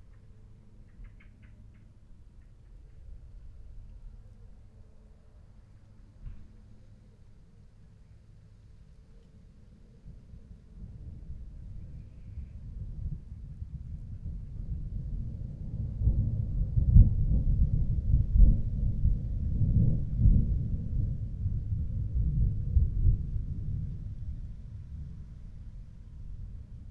thunderstorm at distance ... SASS on a tripod ... bird calls ... wing beats ... from starling ... wood pigeon ... collared dove ... blackbird ... background noise ... traffic ... voices ... donkey braying ... car / house alarm ... and then the rain arrives ...
Malton, UK